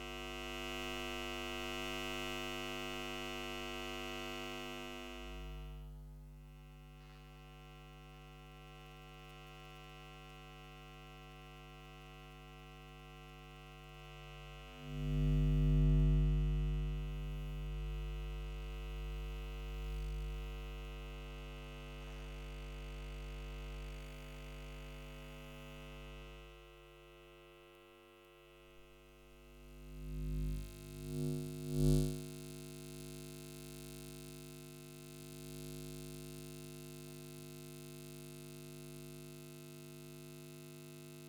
Cuenca, Cuenca, España - #SoundwalkingCuenca 2015-11-20 Coil pickup soundwalk, CDCE, Fine Arts Faculty, Cuenca, Spain
A soundwalk through the Fine Arts Faculty Building, Cuenca, Spain, using a JRF coil pickup to register the electro-magnetic emissions of different electronic devices in the building.
JFR coil pickup -> Sony PCM-D100